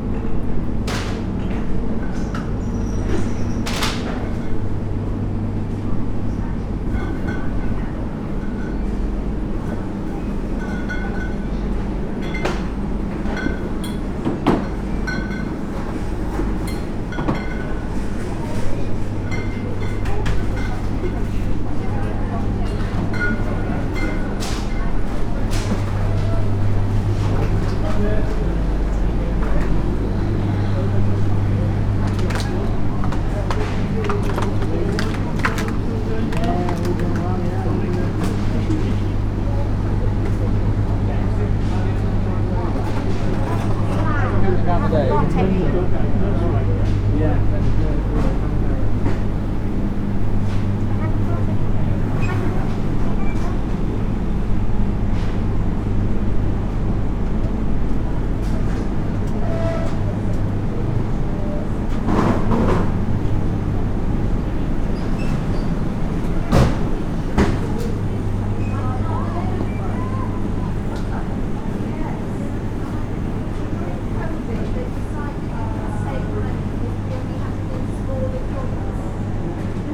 Supermarket, Malvern, UK
Down the freezer aisle and then the bread department, through the tills and briefly outside.
MixPre 6 II with 2 Sennheiser MKH 8020s.